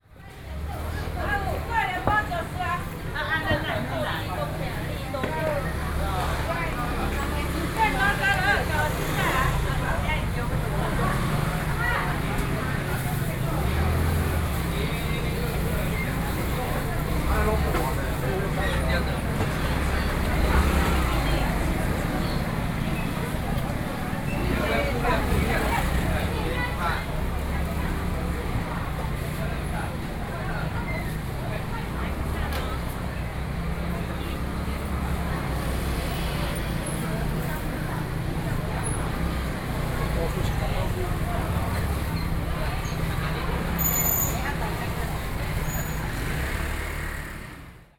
Sanzhong District, New Taipei City, Taiwan - SoundWalk, Traditional markets